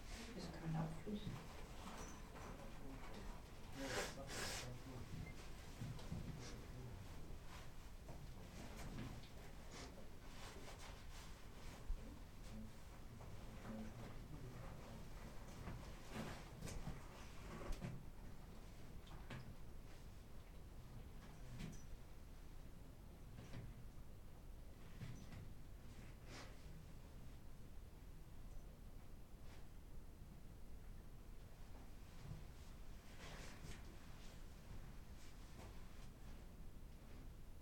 Wachendorf, Bruder-Klaus-Kapelle
05.07.2008, 15:30
im inneren der bruder-klaus-kapelle, besucher, geflüster, stille.
Feldkapelle für den Heiligen Bruder Klaus, gebaut von Peter Zumthor, Hof Scheidtweiler, Mechernich-Wachendorf, eingeweiht am 19. Mai 2007.
5 July 2008, 15:30